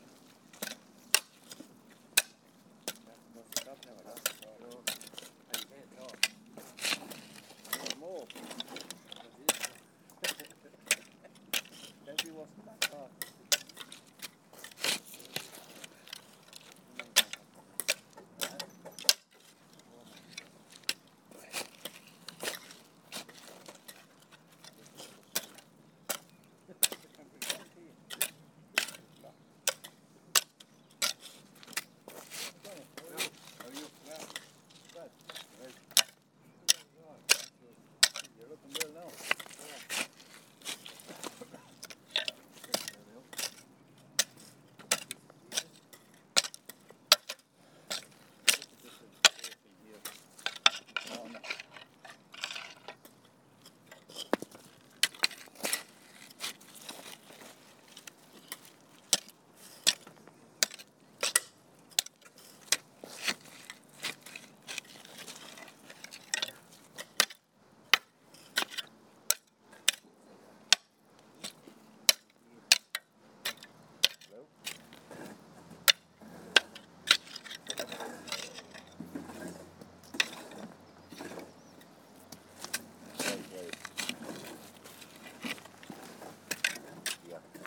Cloghcarrigeen East, Co. Tipperary, Ireland - Richard Digging
Sounding Lines
by artists Claire Halpin and Maree Hensey
31 March, 11:01